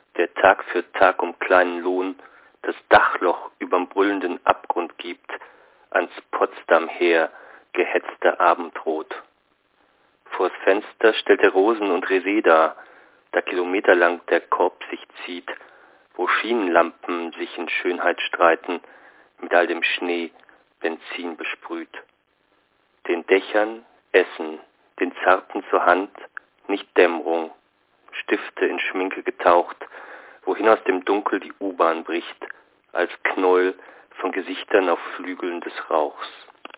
Gleisdreieck 1924 - Gleisdreieck - Boris Pasternak (30. Januar 1923)
Berlin, Deutschland